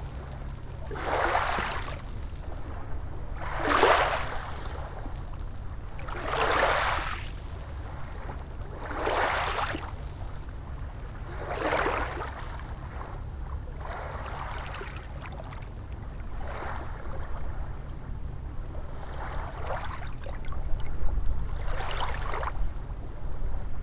thiessow: strand - the city, the country & me: beach
sloshing waves over pebbles
the city, the country & me: march 6, 2013